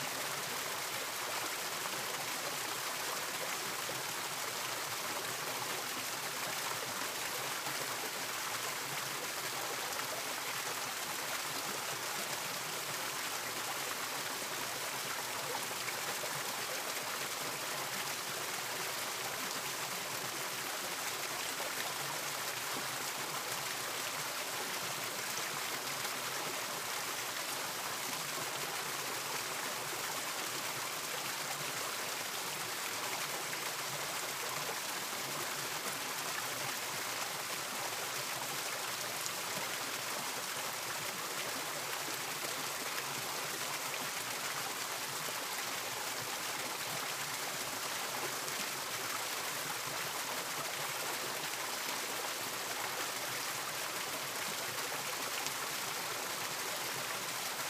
stream runs through a high cascade, / run off from lake Anza
California, United States of America, 26 March 2010, 16:00